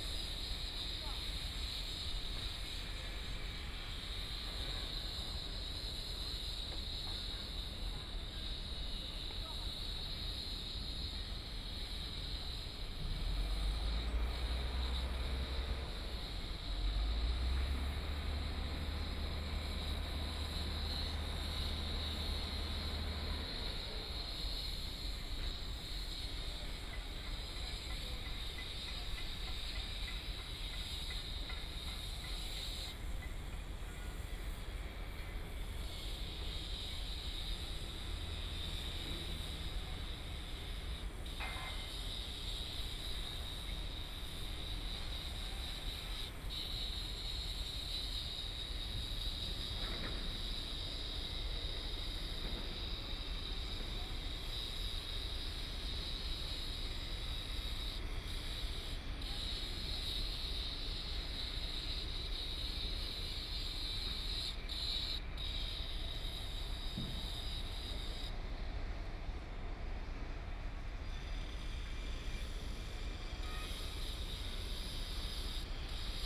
Power Station of Art, Shanghai - Construction site sounds
Standing on the top floor of the museum platform, Construction site sounds, Binaural recording, Zoom H6+ Soundman OKM II
Huangpu, Shanghai, China, December 2013